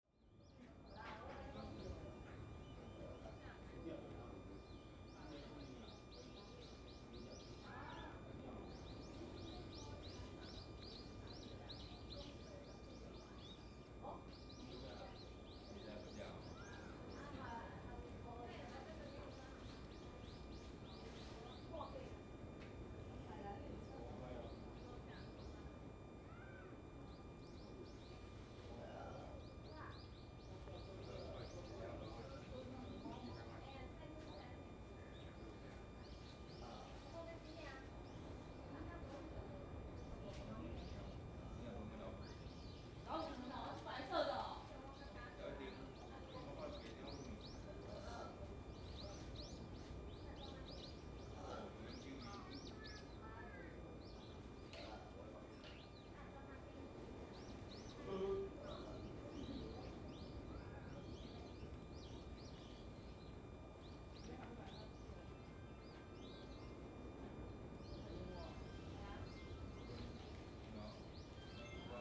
觀音洞, Lüdao Township - in front of the temple
In the square, in front of the temple
Zoom H2n MS+XY